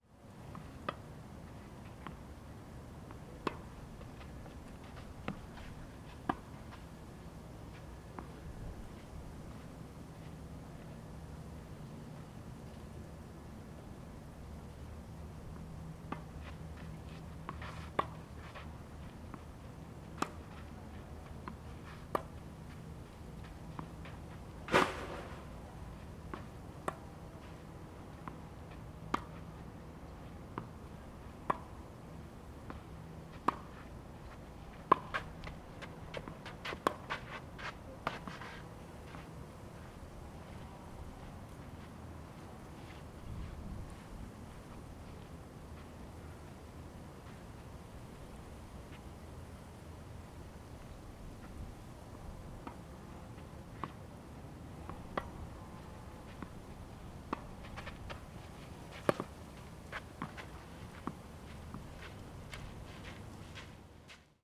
Cedars Tennis Resort, Longboat Key, Florida, USA - Cedars Tennis Resort
Recording of tennis on the terre battue.
26 March, ~13:00